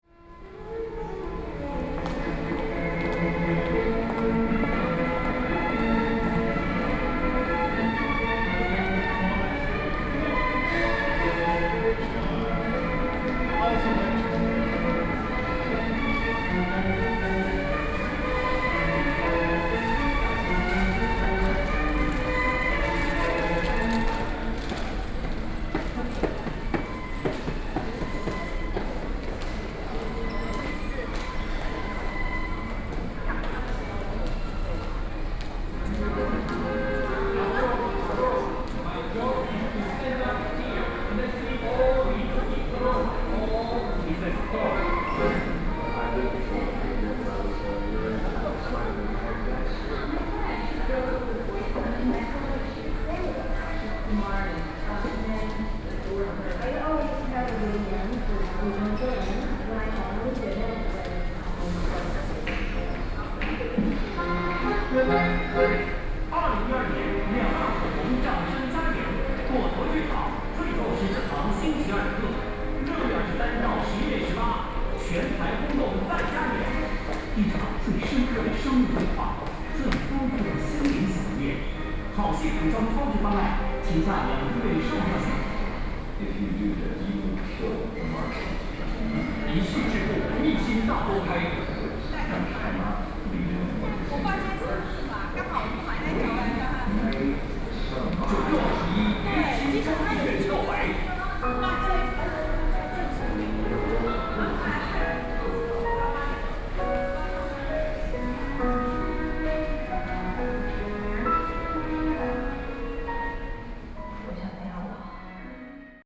Da'an District, Taipei - In the bookstore lobby
In the bookstore lobby, TV ads sound, Footsteps
Binaural recordings, Sony PCM D50